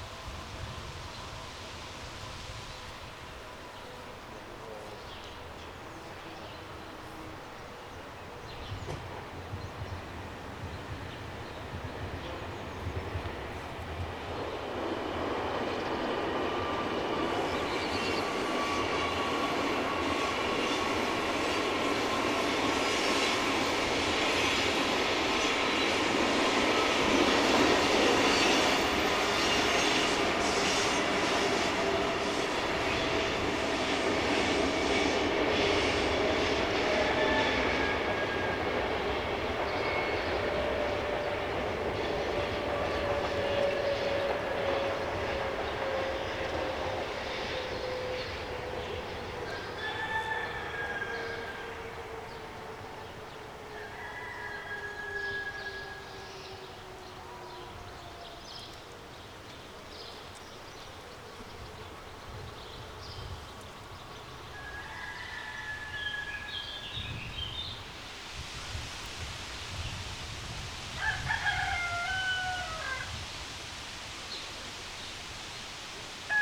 Wil, Switzerland - Afternoon relaxing with chickens and passing trains
Awaiting soundcheck at Gare de Lion, Wil, Switzerland. Recorded on an Audio Technica AT815ST with a m>s setting and later reconnected with Waves S1 Imager plugin.